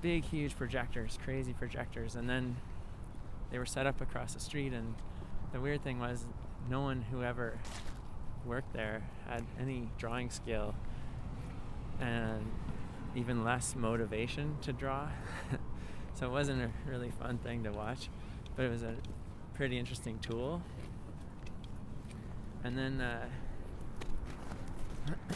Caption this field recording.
This is my Village, Tomas Jonsson